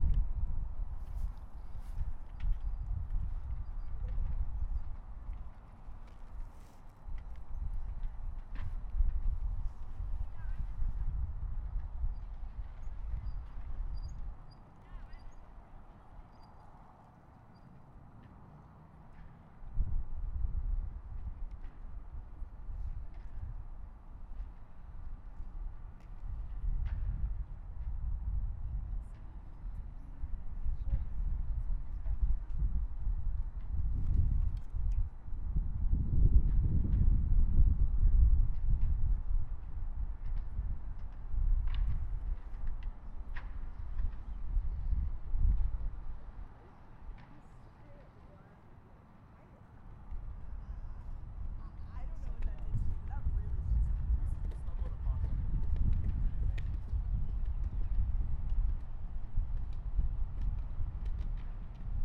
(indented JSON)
{
  "title": "N Cascade Ave, Colorado Springs, CO, USA - Wind in the Trees",
  "date": "2018-04-28 16:27:00",
  "description": "Northwest Corner of South Hall Quad. Dead Cat used. Set 18\" off the ground on the stone base of a lamp post pointed up at the tree branches.",
  "latitude": "38.85",
  "longitude": "-104.82",
  "altitude": "1846",
  "timezone": "America/Denver"
}